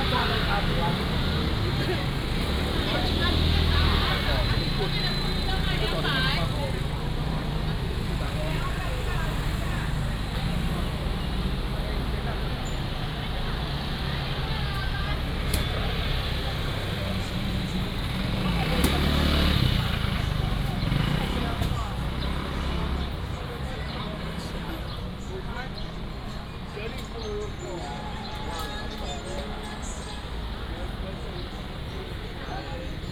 Xinyi Rd., Shengang Township - Walking in the market
Walking in the market, Traffic sound, Vendors, motorcycle
Changhua County, Taiwan, 15 February